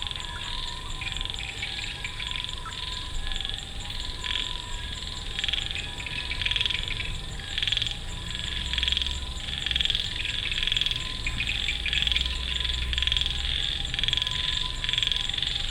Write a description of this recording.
An ambulance passing by with nice reverberation in front of the clattering frogs on the pond of Puh Annas guesthouse